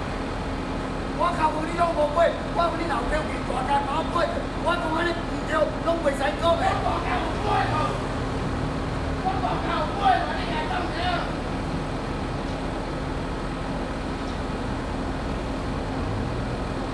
Yongheng Rd., 永和區, New Taipei City - Road Construction
Road Construction, Workers quarrel between each other
Zoom H4n
New Taipei City, Taiwan, 12 June 2011